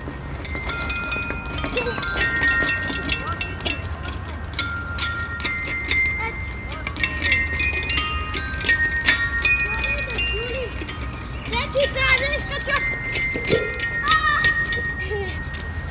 Stadtkern, Essen, Deutschland - essen, pferdemarkt, playground
An einem Spielplatz auf dem Pferdemarkt. Zwei Kinder hüpfen auf den klingenden Spielsteinen und springen auf die metallene Halbkugel. Im Hintergrund zwei Musiker an einer Bank.
Projekt - Stadtklang//: Hörorte - topographic field recordings and social ambiences